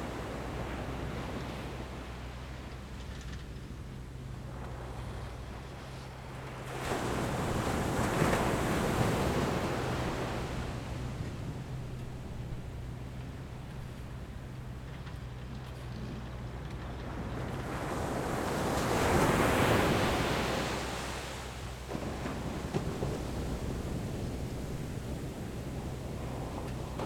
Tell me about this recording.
Sound of the waves, Very hot weather, Zoom H6 XY